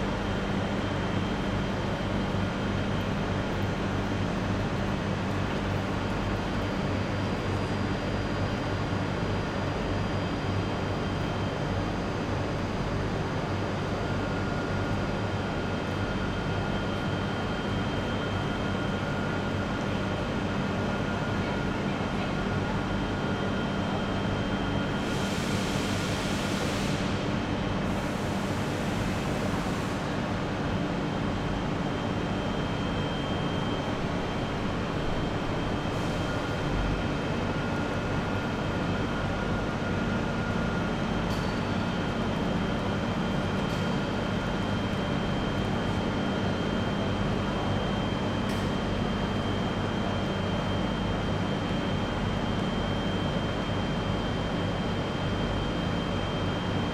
train station
Captation : ZOOMH6
France métropolitaine, France, 12 August